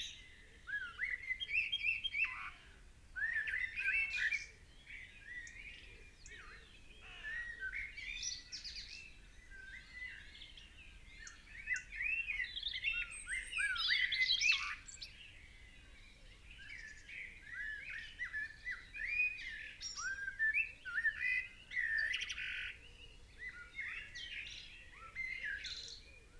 Chapel Fields, Helperthorpe, Malton, UK - early morning blackbirds ...
Early morning blackbirds ... binaural dummy head on the garden waste bin ... calls ... song ... from robin ... carrion crow ... pheasant ... background noise ...
6 April, ~05:00